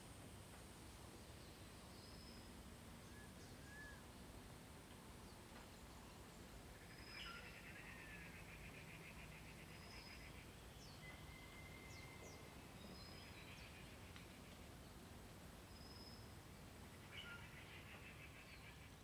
日月大道院, 埔里鎮桃米里 - Bird calls
Bird calls
Zoom H2n MS+XY